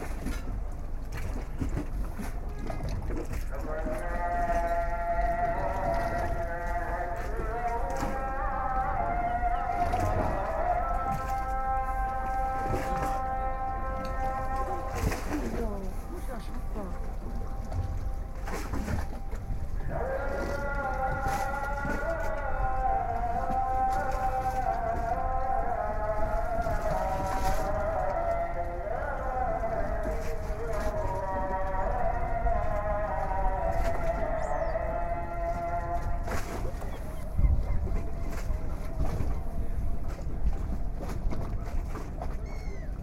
{"title": "Bosphorus sciabordio", "date": "2010-12-31 14:23:00", "description": "Anadolukavagi, a small fishing village. Lapping of the waves, seagulls, the song of the muezzin", "latitude": "41.17", "longitude": "29.09", "altitude": "6", "timezone": "Europe/Istanbul"}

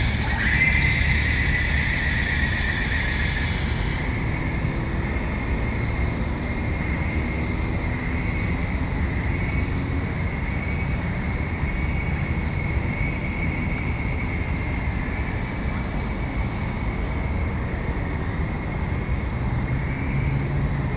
{"title": "Hutan Rekreasi, Melaka, Malaysia - Dusk Chorus at Recreational Forest", "date": "2017-11-19 18:58:00", "description": "Dusk chorus. In the background is the busy road nearby the recreational forest entrance. People are leaving as its approaching dark.", "latitude": "2.28", "longitude": "102.30", "altitude": "58", "timezone": "Asia/Kuala_Lumpur"}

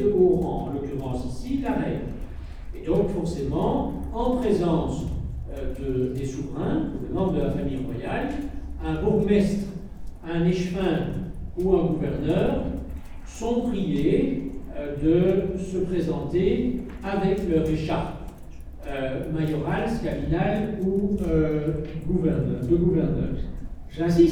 {"title": "L'Hocaille, Ottignies-Louvain-la-Neuve, Belgique - A course of politics", "date": "2016-03-18 09:00:00", "description": "In the small Pierre de Coubertin auditoire, a course of politics.", "latitude": "50.67", "longitude": "4.61", "altitude": "131", "timezone": "Europe/Brussels"}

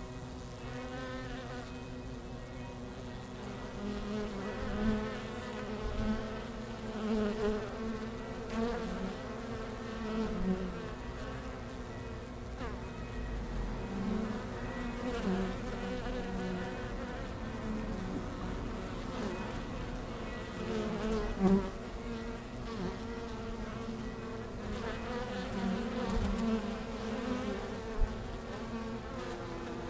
{"title": "Langel, Köln, Deutschland - Bienen Anfang Oktober / Bees at the beginning of October", "date": "2014-10-03 18:15:00", "description": "Die Sonne ist von den Bienenkästen verschwunden, die meisten Bienen sind auf dem Weg in den Stock. Viele haben einen weißen Rücken von den Blüten des großen Springkrautes, dass jetzt noch blüht. Im Hintergrund läuten die Kirchenglocken im Dorf.\nThe sun has disappeared from the beehives, most bees are on the way back to the hive. Many have a white back of the flowers of the bee-bums [Impatiens glandulifera] that still flourishes. In the background the church bells are ringing in the village.", "latitude": "50.84", "longitude": "7.00", "timezone": "Europe/Berlin"}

